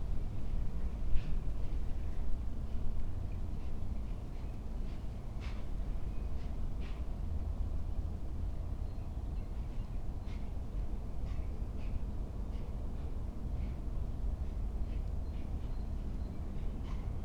Mariborski otok, river Drava, tiny sand bay under old trees - with clogs on sand, river flows quietly